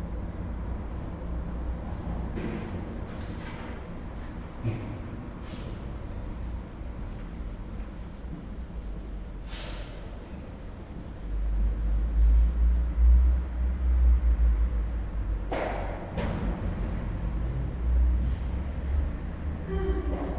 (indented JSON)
{
  "title": "Church of the Annunciation of the Blessed Virgin Mary, Prague, Czech Republic - Orthodox Church of the Annunciation of the Blessed Virgin Mary",
  "date": "2012-04-06 16:12:00",
  "description": "Good Friday preparation at empty Orthodox Church of the Annunciation of the Blessed Virgin Mary in Prague 2. The gothic building is used currently by Orthodox Church.",
  "latitude": "50.07",
  "longitude": "14.42",
  "altitude": "198",
  "timezone": "Europe/Prague"
}